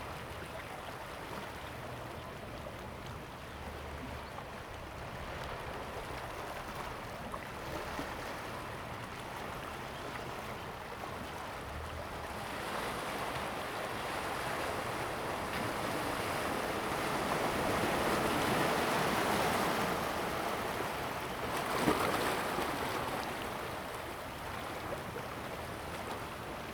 恆春鎮砂島, Pingtung County - Tide
On the coast, Sound of the waves, Birds sound, traffic sound
Zoom H2n MS+XY
Pingtung County, Taiwan